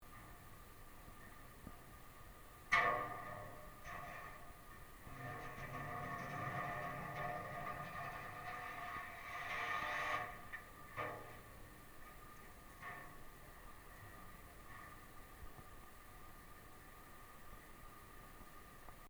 Binckhorst Harbor Saturnusstraat
contact mic on container facing dry bulk terminal